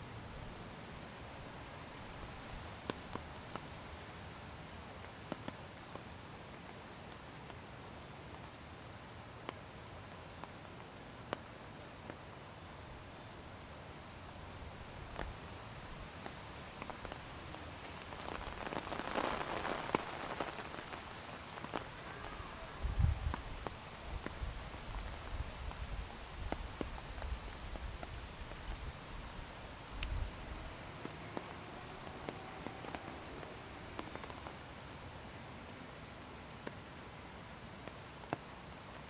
O áudio da paisagem sonora foi gravado no cruzamento onde se encontra a ponte dentro do Parque Trianon, em São Paulo - SP, Brasil, no dia 17 de setembro de 2018, às 12:46pm, o clima estava chuvoso e com ventos leves. Foram usados apenas o gravador Tascam DR-40 com seus microfones condensadores cardióides, direcionados para fora, acoplado em um Tripé Benro.